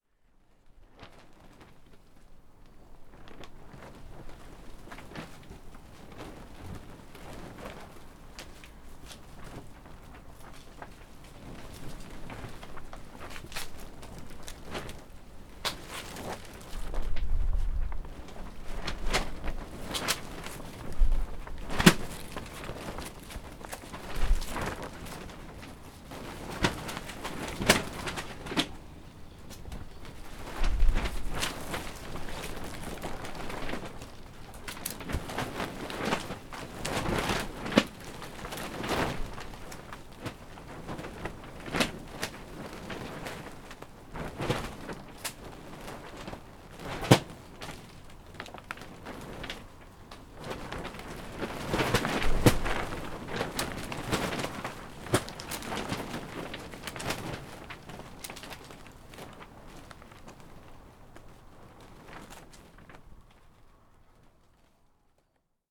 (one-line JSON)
{"title": "Srem, allotments nearby gravel pit, greenhouse", "date": "2009-12-25 19:58:00", "description": "plastic walls of a greenhouse fluttering in strong wind, recorded while standing inside", "latitude": "52.08", "longitude": "16.99", "altitude": "74", "timezone": "Europe/Warsaw"}